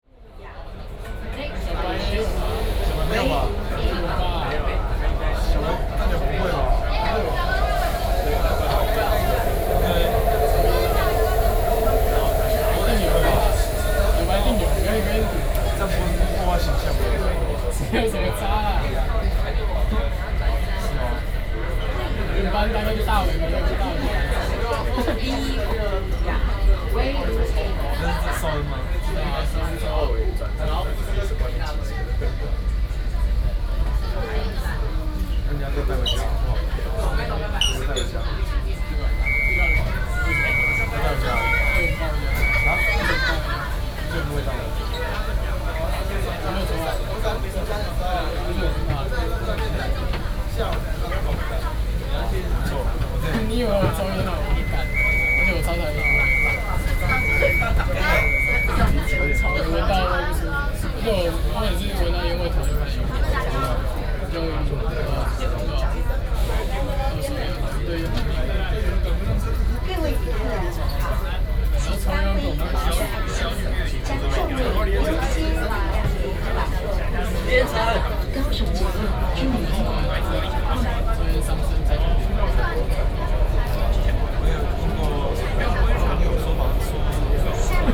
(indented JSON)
{
  "title": "Lingya, Kaohsiung - In the subway",
  "date": "2012-05-18 16:09:00",
  "description": "In the subway, Sony PCM D50 + Soundman OKM II",
  "latitude": "22.63",
  "longitude": "120.34",
  "altitude": "9",
  "timezone": "Asia/Taipei"
}